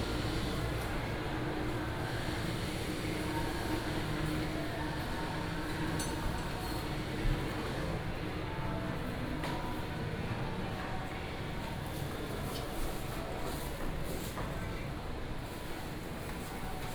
南門市場, Changhua City - Walking in the market
Walking in the market
Changhua City, Changhua County, Taiwan, 19 January 2017, ~09:00